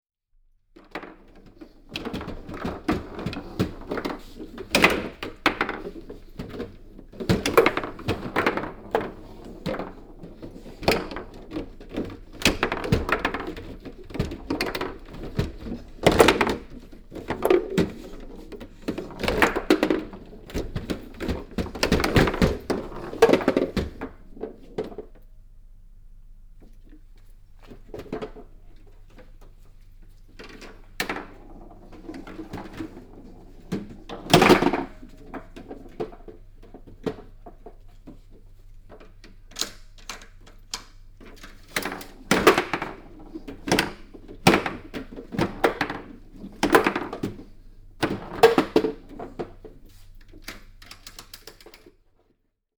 tafelvoetbal in het jongerencentrum
tafelvoetbalspel
playing table soccer in the youth centre